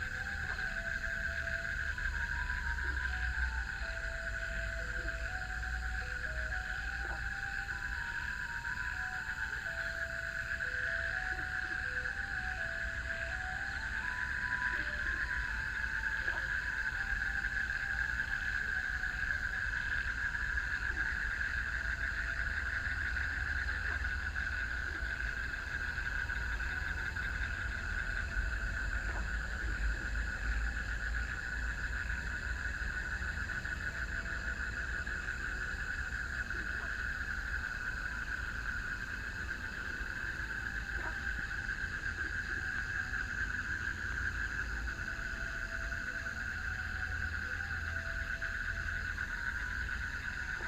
桃米里埔里鎮, Taiwan - Frogs chirping
Frogs chirping, Garbage trucks, Traffic Sound